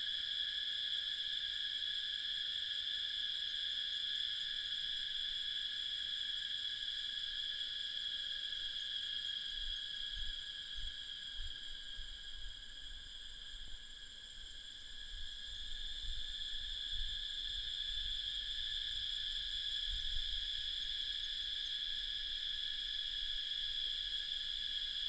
Cicada sounds
Binaural recordings
Sony PCM D100+ Soundman OKM II
Yuchi Township, 華龍巷43號